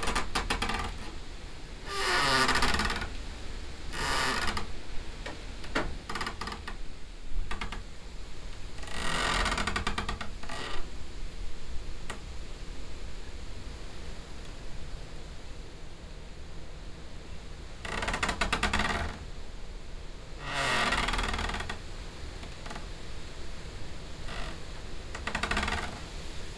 World Listening Day: Mud village, North of Holland - Windy Mud village, tree scraping in tree hut.
Schoorl, Netherlands